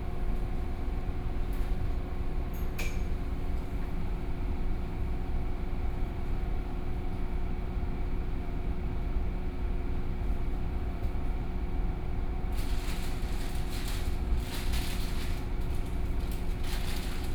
Toucheng Station, Taiwan - On the platform
On the platform waiting for the train, Station broadcast messages, Train station, Binaural recordings, Zoom H4n+ Soundman OKM II
Yilan County, Taiwan, 7 November